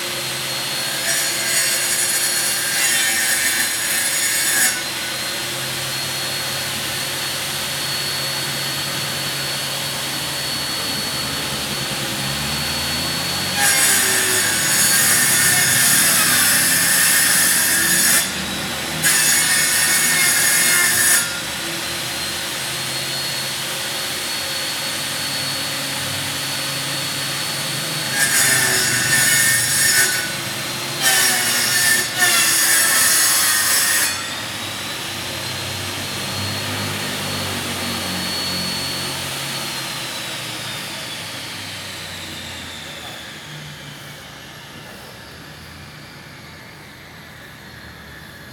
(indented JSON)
{
  "title": "永亨路, Yonghe Dist., New Taipei City - Chainsaw",
  "date": "2011-05-20 13:56:00",
  "description": "Chainsaw\nZoom H4n",
  "latitude": "25.00",
  "longitude": "121.53",
  "altitude": "22",
  "timezone": "Asia/Taipei"
}